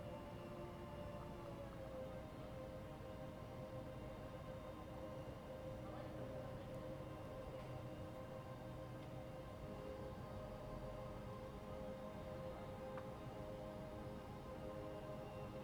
Ascolto il tuo cuore, città. I listen to your heart, city. Several chapters **SCROLL DOWN FOR ALL RECORDINGS** - Round midnight with sequencer but without LOL
"Round midnight with sequencer but without LOL in background in the time of COVID19" Soundscape
Chapter CXX of Ascolto il tuo cuore, città. I listen to your heart, city
Tuesday, July 27th – Wednesday, July 28th 2020, four months and seventeen days after the first soundwalk (March 10th) during the night of closure by the law of all the public places due to the epidemic of COVID19.
Start at 11:47 p.m. end at 00:## a.m. duration of recording 20’14”
27 July 2020, 23:47